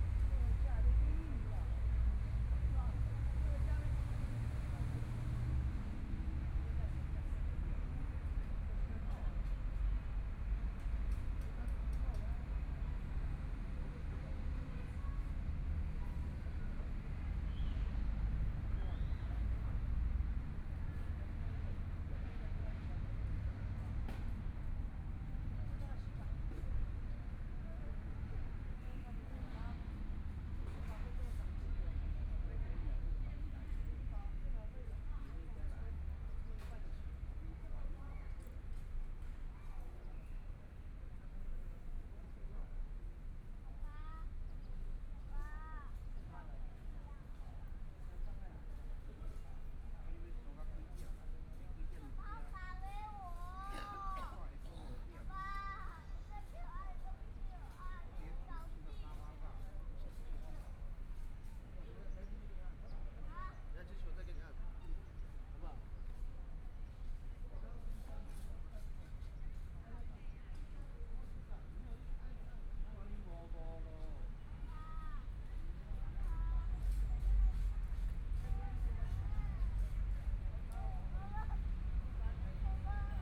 慈濟醫院, Hualien City - Outside the hospital
Outside the hospital, Birds sound, Traffic Sound, Environmental sounds
Please turn up the volume
Binaural recordings, Zoom H4n+ Soundman OKM II